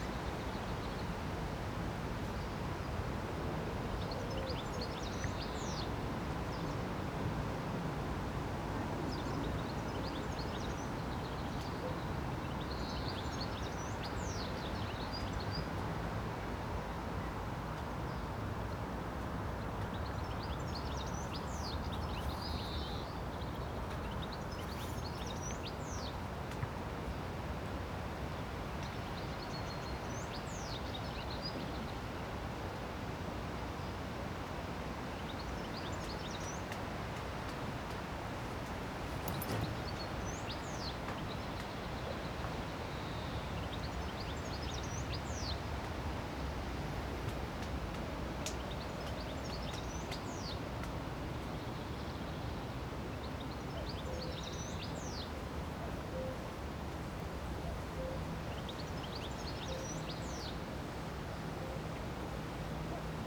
Poznan, Strzeszyn district, Strzeszynskie lake - at the pier
ambience at the pier at Strzeszynskie Lake. gentle swish of the nearby rushes. some strange clicking sounds coming from the rushes as well. ambulance on an nearby road where there is rather heavy traffic normally. racing train sounds are also common in this place as one of the main train tracks leading out of Poznan towards western north is on the other side of the lake. planes taking of as the Poznan airport is also not far away. pages of a book turned by the wind. (sony d50)
Poznań-Jeżyce, Poland, 7 June, 10:04